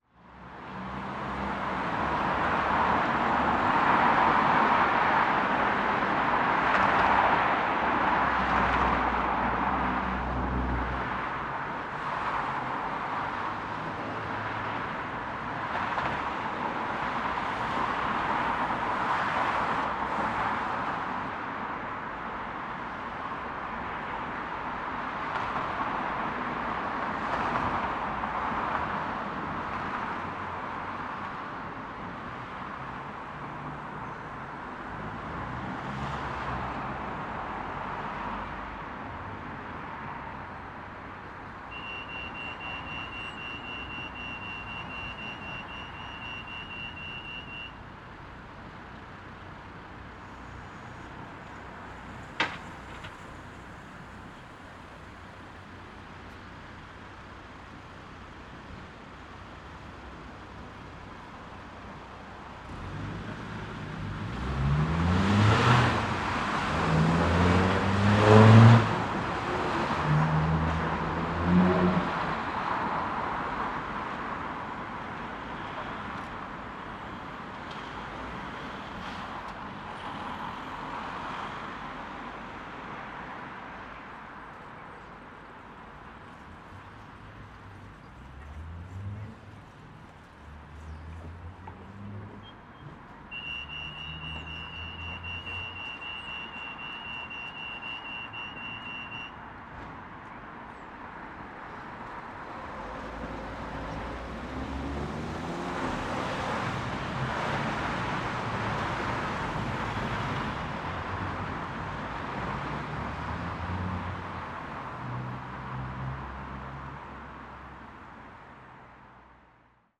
{"title": "Queens University, Belfast, UK - Queens University Belfast", "date": "2020-10-15 17:34:00", "description": "Recording in front of the local university, main road which has much of the pedestrian and vehicle traffic. Few people crossing in front of the main building of Queen’s University Belfast. It resembles a casual moment in this particular area. This is a day before Lockdown 2 in Belfast.", "latitude": "54.58", "longitude": "-5.94", "altitude": "17", "timezone": "Europe/London"}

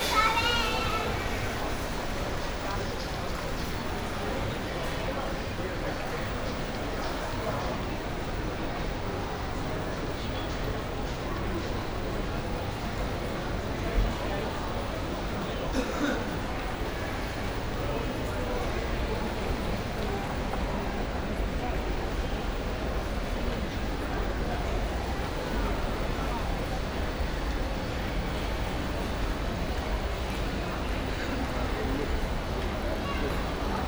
{"title": "Kassel Citypoint Walk - Kassel Citypoint", "date": "2010-08-02 15:00:00", "description": "I walked from the underground carpark up to the 2nd level of the shopping mall, accompanied by a song from the sound system of the mall. Got the end of the song in front of the cash machine. ZoomH4 + OKM binaural mic", "latitude": "51.32", "longitude": "9.50", "altitude": "165", "timezone": "Europe/Berlin"}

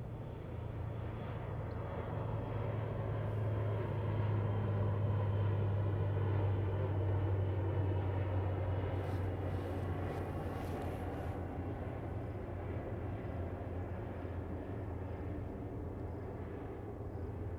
{"title": "青螺村, Huxi Township - Aircraft flying through", "date": "2014-10-21 16:38:00", "description": "Aircraft flying through, On the coast\nZoom H2n MS +XY", "latitude": "23.61", "longitude": "119.65", "altitude": "4", "timezone": "Asia/Taipei"}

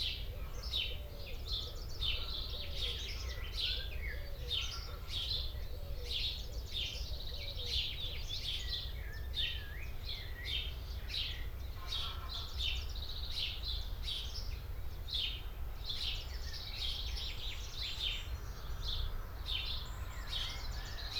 {"title": "Vierhuisterweg, Rohel, Nederland - early morning birds in Rohel, Fryslãn", "date": "2022-04-18 05:35:00", "description": "i woke up to pee and, hearing the birds outsde, switched on my recorder and went back to sleep for another hour or so.\nSpring has just begun, not all birds have returned yet, the blackhat is the latest arrivalk. Enjoy", "latitude": "52.91", "longitude": "5.85", "altitude": "1", "timezone": "Europe/Amsterdam"}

